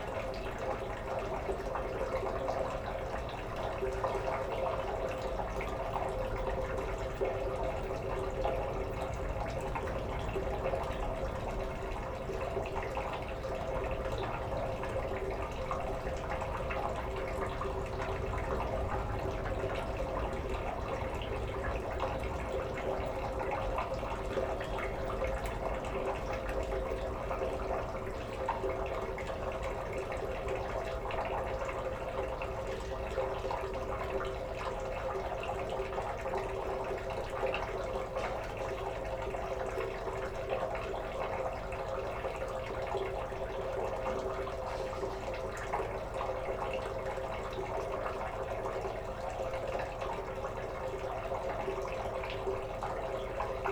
berlin, pflügerstraße: gully - the city, the country & me: gully
the city, the country & me: august 20, 2010